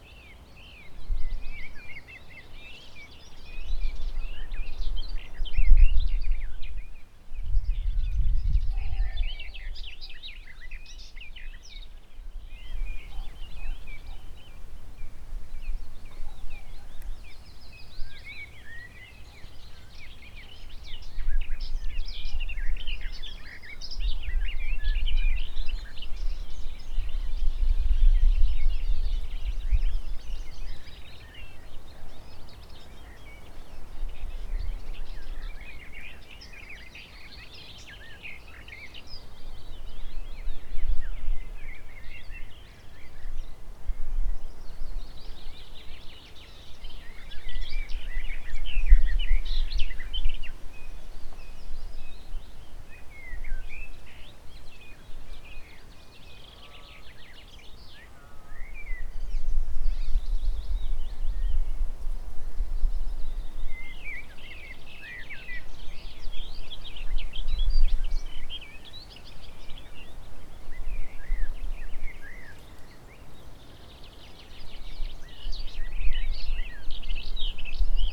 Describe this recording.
Lake Tjeuke is the biggest lake in this province Fryslan. You can hear many birds, a passing airplane and (shortly) my dog Lola.